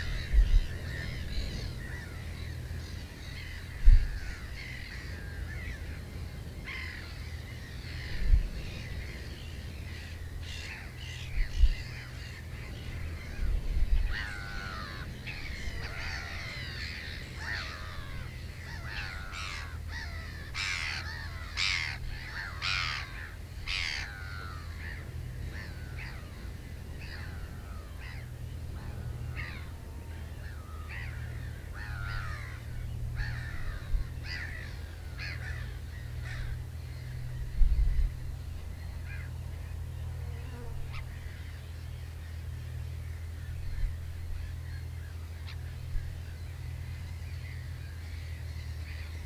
Terschelling, Hoorn (nabij het wad)